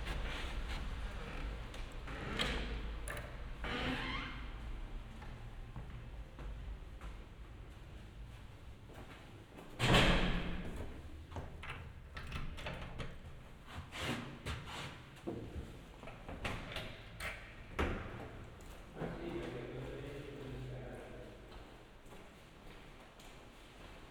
Ascolto il tuo cuore, città. I listen to your heart, city. **Several chapters SCROLL DOWN for all recordings ** - I’m walking in the rain in the time of COVID19 Soundwalk
"I’m walking in the rain in the time of COVID19" Soundwalk
Chapter LI of Ascolto il tuo cuore, città. I listen to your heart, city
Monday April 20th 2020. San Salvario district Turin, walking to Corso Vittorio Emanuele II and back, forty one days after emergency disposition due to the epidemic of COVID19.
Start at 4:15 p.m. end at 4:43 p.m. duration of recording 28’00”
The entire path is associated with a synchronized GPS track recorded in the (kmz, kml, gpx) files downloadable here: